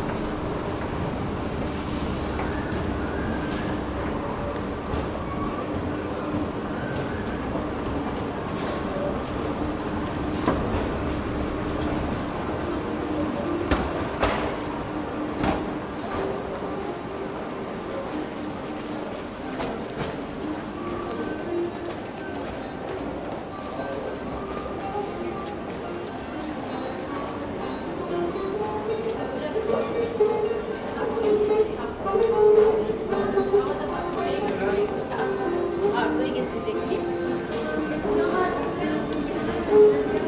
Levent metro station, a week of transit, monday morning - Levent metro station, a week of transit, friday morning
She is there to remind you of the unchangeable pace of the organism of Istanbul. In the metropolitan underground, what surprise would you expect? I decide not to take the metro in the afternoon, I will walk home, get lost and listen elsewhere instead.
1 October 2010, 09:48